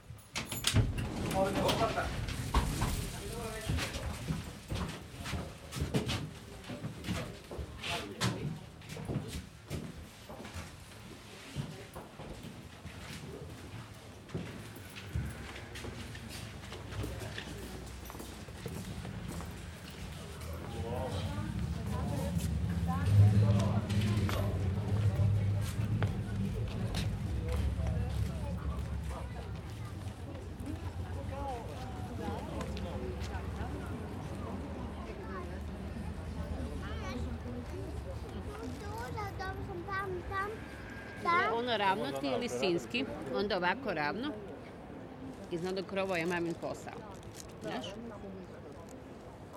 Zagreb, funicular in center of town - drive from dowtown to Gradec
sounds at the entrance, drive up, sounds after arrival